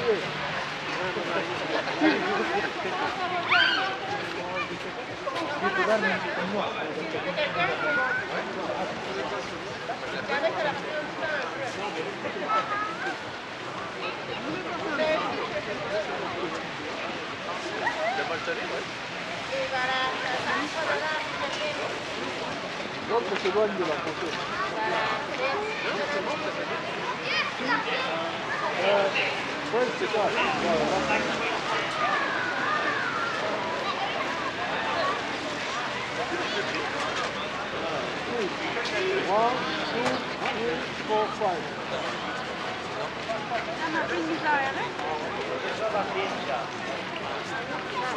Place Jacques-Cartier, Montréal, QC, Canada - Place Jacques Cartier
Recording in front of a Maple syrup stand and a light installation, where multiple groups of people are walking through. This is a low amount of people that would usually fill up this area due to its proximity to various shops and restaurants. There was also supposed to be a small winter festival in this area.
Québec, Canada, 2 January 2021, 17:58